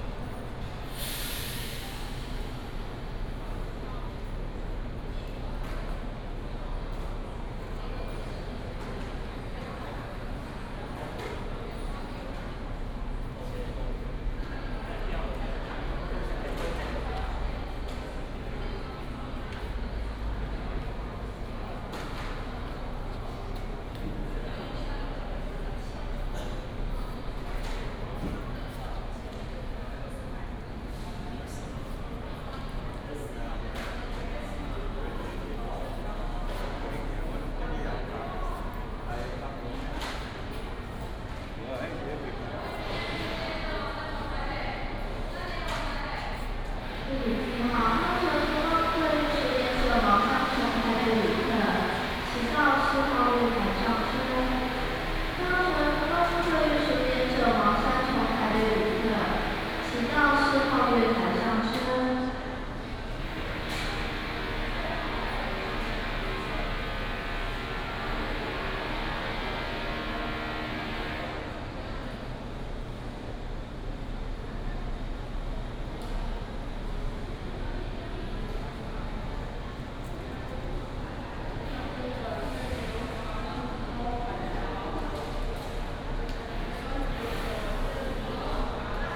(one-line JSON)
{"title": "臺中轉運站, East Dist., Taichung City - In the terminal lobby", "date": "2017-03-22 14:52:00", "description": "In the terminal lobby, Station information broadcast", "latitude": "24.14", "longitude": "120.69", "altitude": "83", "timezone": "Asia/Taipei"}